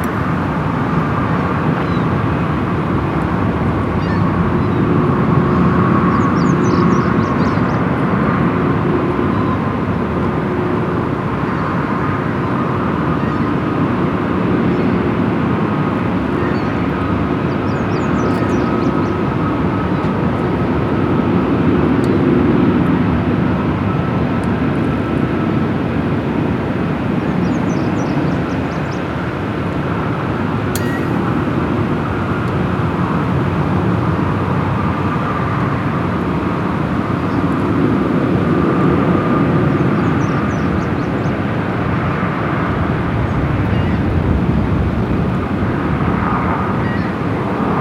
USACE EDP Romeoville, IL, USA - Asian Carp Electric Fence Dispersal Barrier
The last line of defense in an ecological nightmare scenario in the making. This site along the Illinois Canal, between an oil refinery and a coal pile, is the US Army Corps of Engineers latest, experimental attempt to keep the invasive Asian Carp from migrating past Chicago and into Lake Michigan. The water just north of this bridge is charged using sunken electrodes, thus discouraging the asian carp (and most other fish) from swimming further north, towards the city of Chicago and, eventually, invading the Great Lakes beyond. Natural echo enhanced by bridge acoustics.